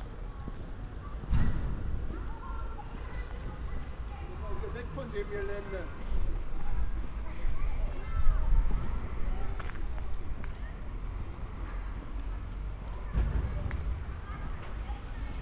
{
  "title": "berlin, gropiushaus, walk - inner circle",
  "date": "2011-08-03 19:00:00",
  "description": "a walk in the inner circle from right to left",
  "latitude": "52.43",
  "longitude": "13.47",
  "altitude": "48",
  "timezone": "Europe/Berlin"
}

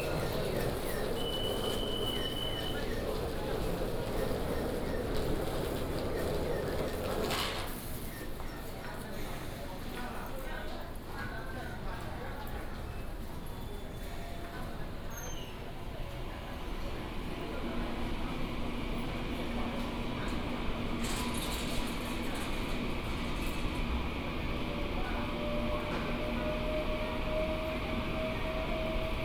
{
  "title": "Zhongli Station - Station platform",
  "date": "2017-02-07 17:29:00",
  "description": "Walk into the Station platform, Station Message Broadcast",
  "latitude": "24.95",
  "longitude": "121.23",
  "altitude": "138",
  "timezone": "Asia/Taipei"
}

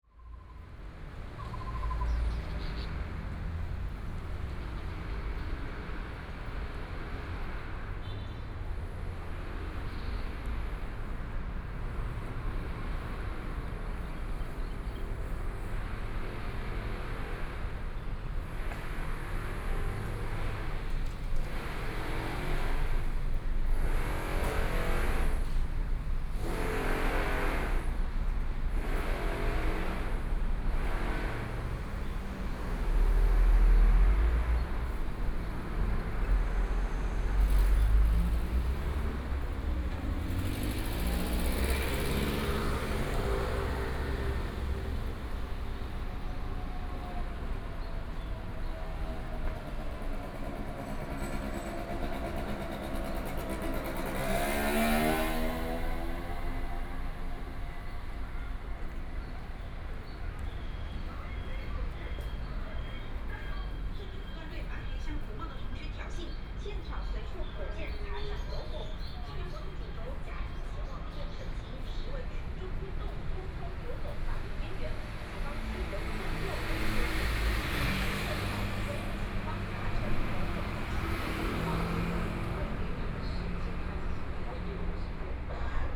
{
  "title": "Xinsheng N. Rd., Taipei City - walking on the road",
  "date": "2014-04-03 11:44:00",
  "description": "walking on the road, Environmental sounds, Traffic Sound, Birds",
  "latitude": "25.06",
  "longitude": "121.53",
  "altitude": "7",
  "timezone": "Asia/Taipei"
}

